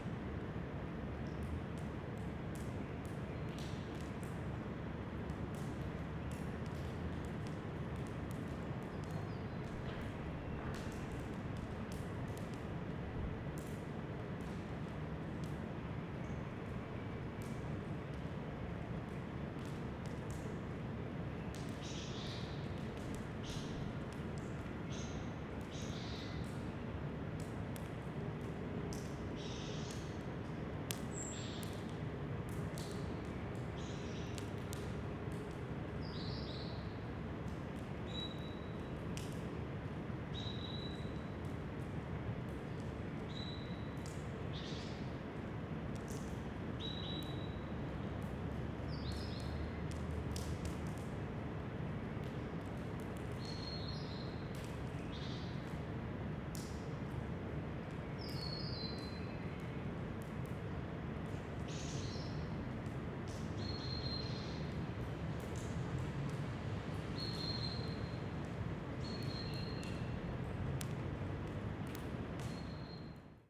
Tallinn Linnahall
melting snow, water dropping from ceiling at Linnahall, the more and more abandoned former town hall of Tallinn